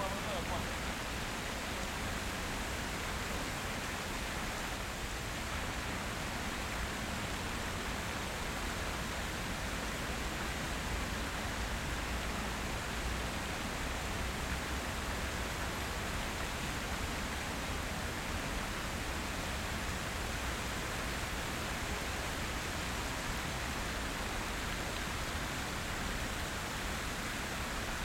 Piata Unirii, Bucharest, Romania - Fountain in the daytime
Sitting on a bench, recording the fountain and people passing with the XY microphone of a Zoom H6.
Municipiul București, România, September 2019